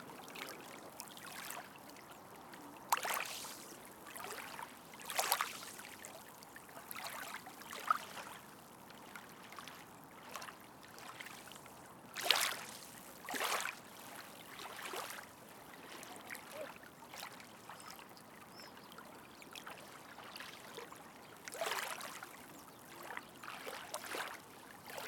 Waves crashing the shore - Rua da Cotovia, 1990 Sacavém, Portugal - Waves crashing the shore
Close miking of waves gently crashing onto the shore, near where rio Tracão meets rio Tejo (between Oriente and Sacavém, Lisboa). Recorded with a zoom H5 internal mics (XY stereo 90º).
Área Metropolitana de Lisboa, Portugal, May 2020